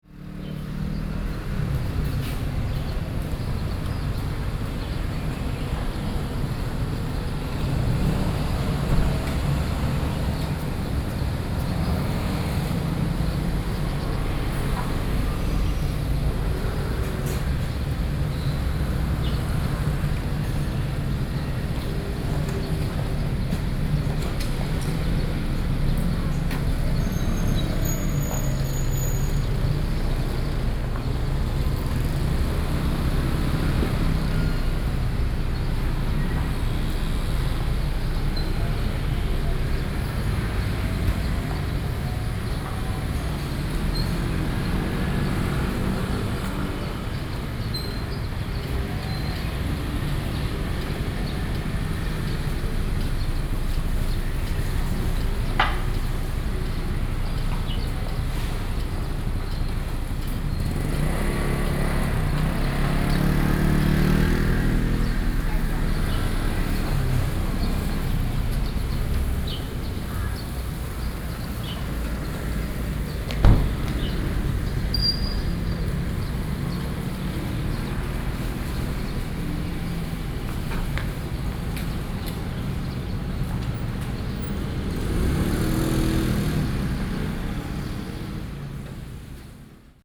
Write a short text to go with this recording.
At the station exit, Bird calls, Traffic Sound, Binaural recordings, Sony PCM D50 + Soundman OKM II